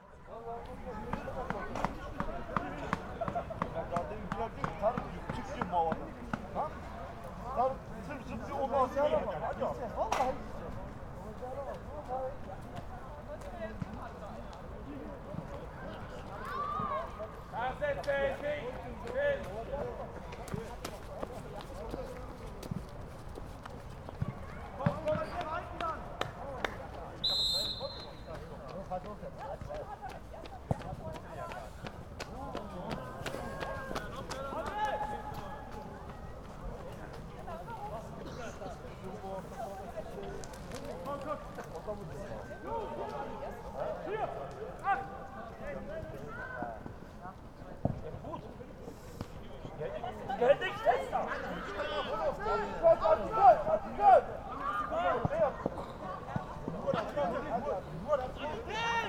sunday afternoon football match
Berlin, Germany, 20 February 2011